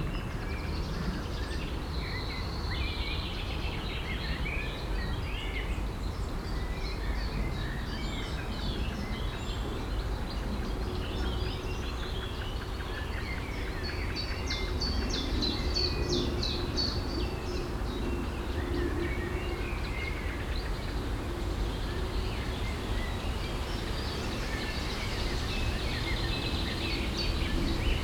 Haarzopf, Essen, Deutschland - essen, rumbachtal, birds and plane
Im Landschaftsschutzgebiet Rumbach. Die Klänge der Vögel und ein Flugzeug quert den Himmel.
In the nature protection zone Rumbachtal. The sounds of birds and a plane crossing the sky.
Projekt - Stadtklang//: Hörorte - topographic field recordings and social ambiences
4 June 2014, ~15:00